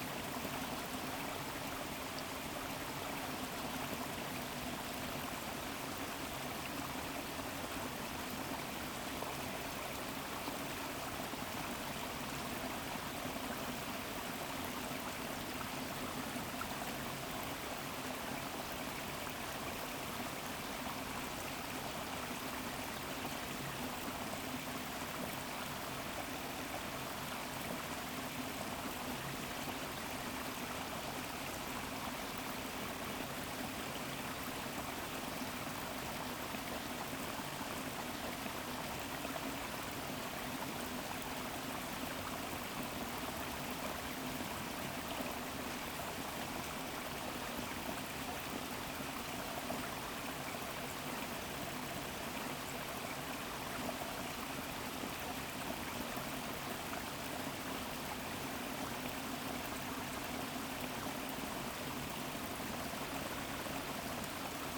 The recording of one of the streamflow from the "Valley of the mills" park.
Recorded with a Zoom H6 (SSH-6 mic)